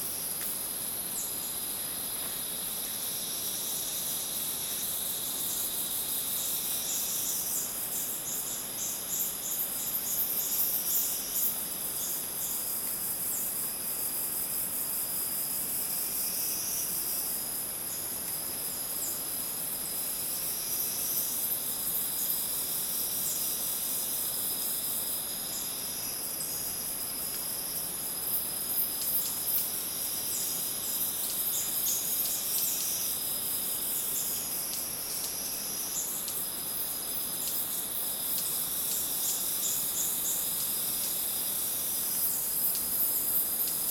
recorded at Iracambi, a NGO dedicated to protect and grow forest